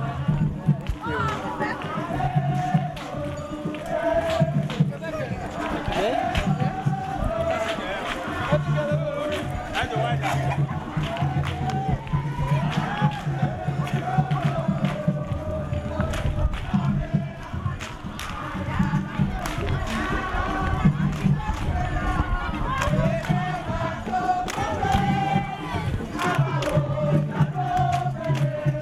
Togbe Tawiah St, Ho, Ghana - church of ARS service
church of ARS (Apolistic Revelation Society)'s service is a Ghanean church with christan and african roots. Their profet is called CHARLES KWABLA NUTORNUTI WOVENU He was a concious objector to the British army. October 31st 1939 the holy ghost came down omn him and he started to sing and preach. We were picked up at main street with a procession with people dressed in white, some holding candles and drumsothers playing drums. some pictures you can see @ my blogspot Lola Vandaag (Lola Radio)